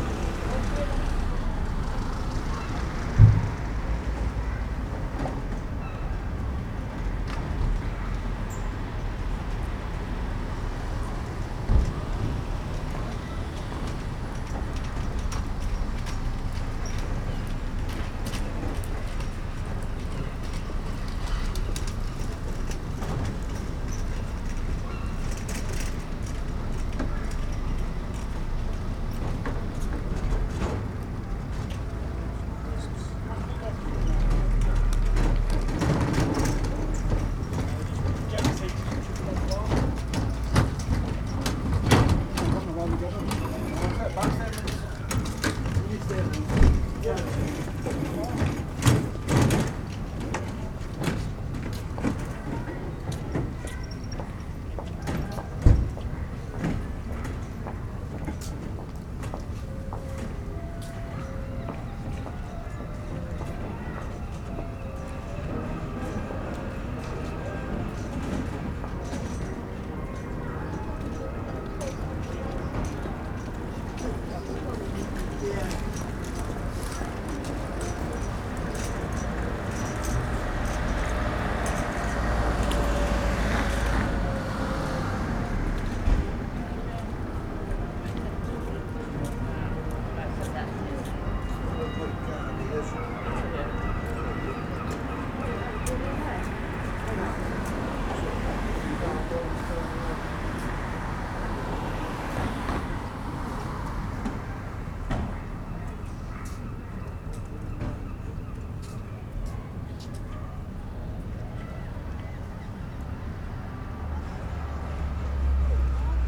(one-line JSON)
{"title": "Bridlington, UK - Bridlington ... downtown ... soundscape ...", "date": "2017-01-20 11:05:00", "description": "Bridlington soundscape ... traffic ... arcade ... voices ... two road sweepers push their carts by ... bird calls ... herring gull ... pied wagtail ... open lavalier mics clipped to hat ...", "latitude": "54.08", "longitude": "-0.19", "altitude": "10", "timezone": "GMT+1"}